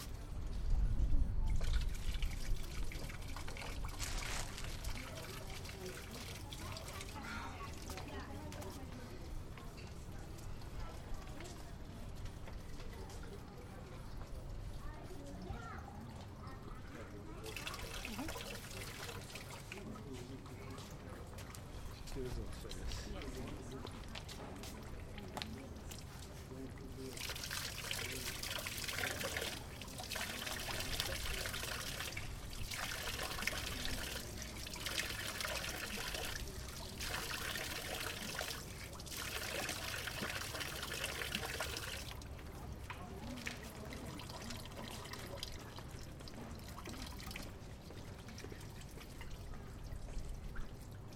Kalemegdan, Belgrade - Cesma, crkva Sv. Petke, (Church St. Petka)
Belgrade, Serbia, 14 June 2011